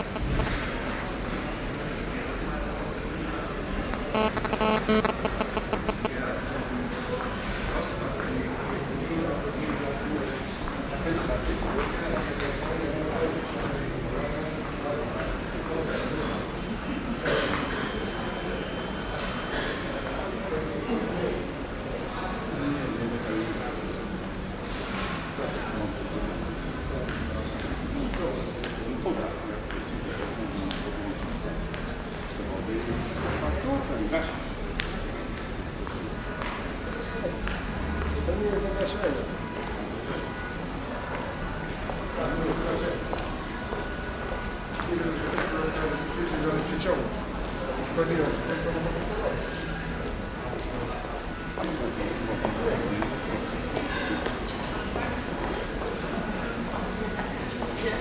soundmap: köln/ nrw
atmo im eingangsbereich abflug des koeln - bonner flughafens, morgens - durchsagen, schritte, rollende koffer, mobilfunkfrequenzen und gespräche
project: social ambiences/ listen to the people - in & outdoor nearfield recordings - listen to the people
5 June 2008, 15:44